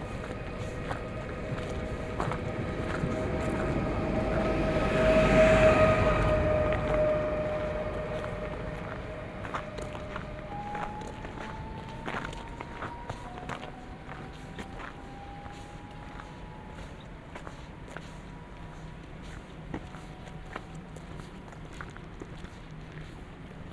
Arriving to Leszczyny by train.
binaural recording with Soundman OKM + Sony D100
sound posted by Katarzyna Trzeciak
województwo śląskie, Polska